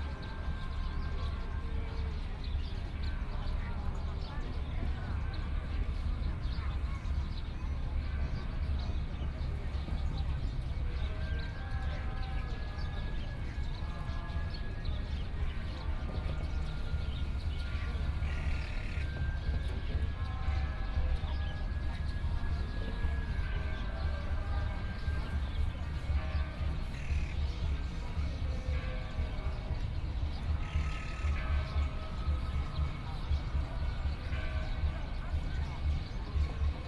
Sitting in the park, Birdsong.Sunny afternoon
Please turn up the volume a little
Binaural recordings, Sony PCM D100 + Soundman OKM II